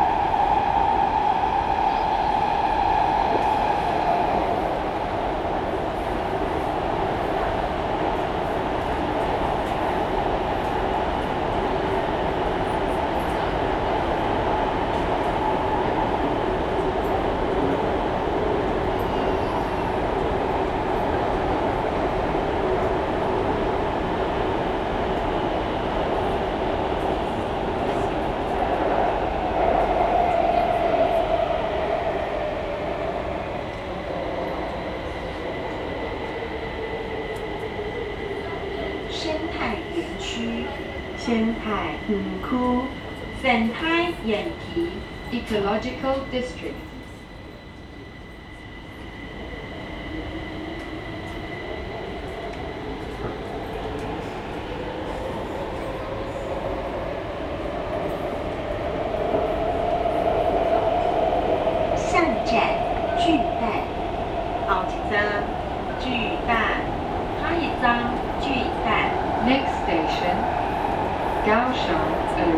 Zuoying - Broadcast Message
Kaohsiung Mass Rapid Transit, from Ecological District Station to Houyi Station, Sony ECM-MS907, Sony Hi-MD MZ-RH1
高雄市 (Kaohsiung City), 中華民國, 29 March 2012